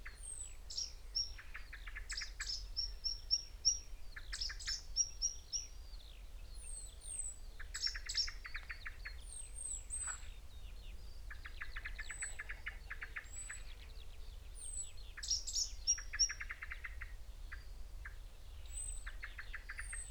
Malton, UK - blackcap song ...
blackcap song ... xlr sass on tripod to zoom h5 ... bird calls ... songs ... from ... wood pigeon ... dunnock ... yellowhammer ... great tit ... skylark ... chaffinch ... extended unattended time edited recording ...
England, United Kingdom, 27 June 2021, ~07:00